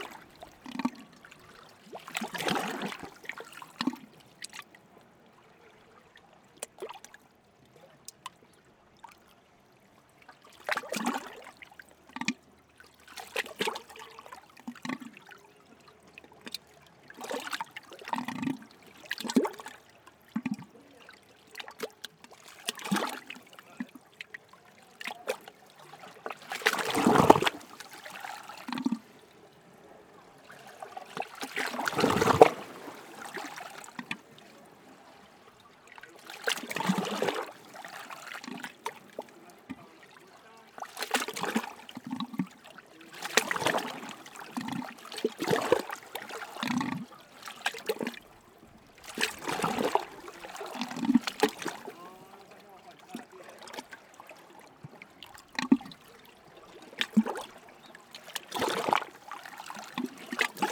C. Dos Calas, Benidorm, Alicante, Espagne - Benidorm - Espagne - Crique de Ti Ximo
Benidorm - Province d'Alicante - Espagne
Crique de Ti Ximo
Ambiance 1
ZOOM H6